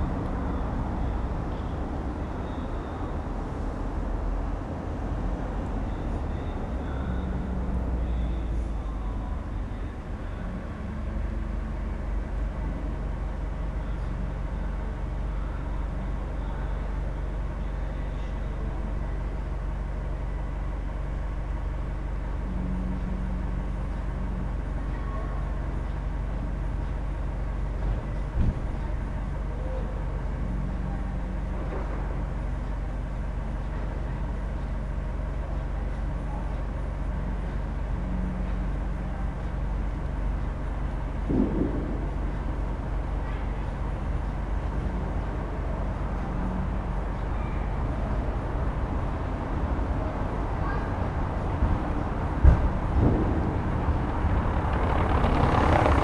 berlin: friedelstraße - the city, the country & me: night street ambience
windy night, dry leaves and small flags in the wind, music of a nearby party, passers by, taxis, bangers in the distance (in anticipation of new years eve?)
the city, the country & me: december 31, 2012